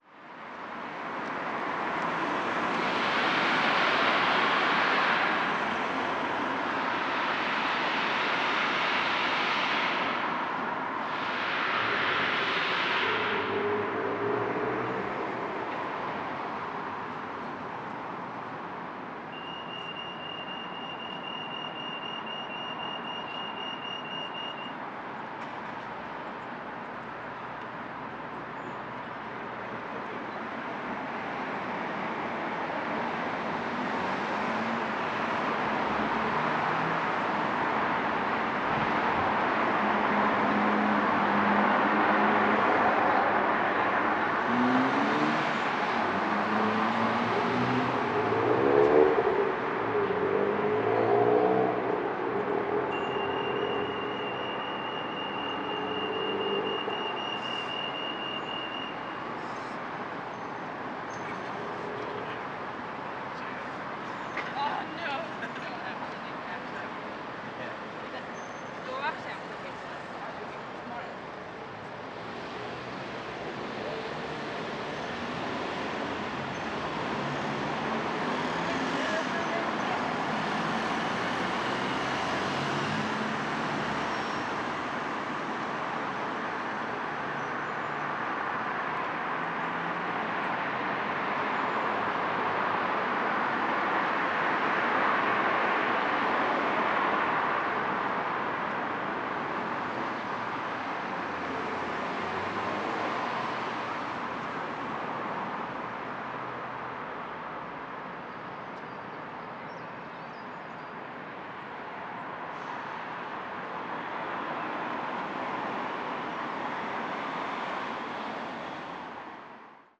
Queens University, Belfast, UK - Queen’s University Belfast
Recording of vehicles, pedestrian cross lights, pedestrians, and distant passer-by chatter.